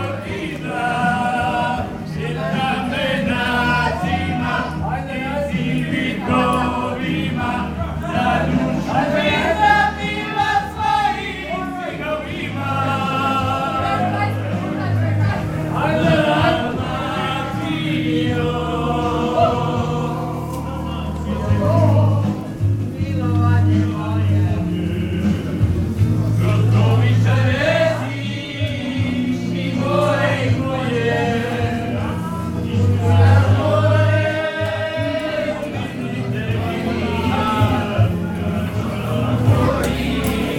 The small pub in the historic center of Zadar was crowded with people singing and celebrating the Independence Day of Croatia.
Zadar, Croatia